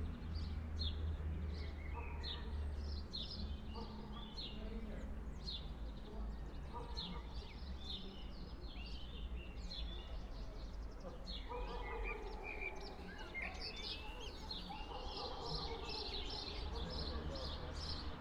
sunday afternoon street ambience, recorded from the balcony of Babica house